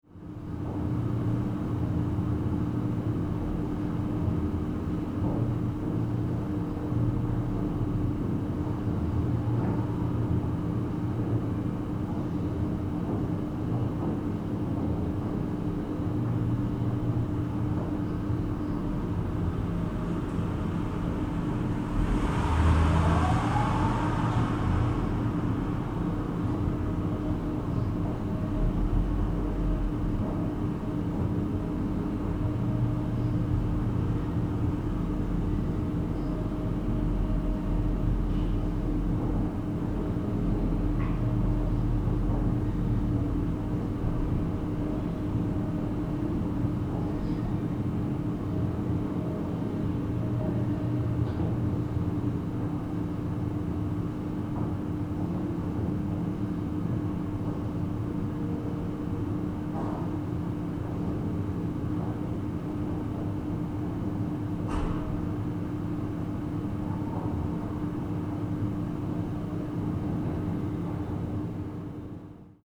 berlin, lafayette building, parking area
soundmap d: social ambiences/ listen to the people - in & outdoor nearfield recordings
Berlin, Germany, 20 May 2009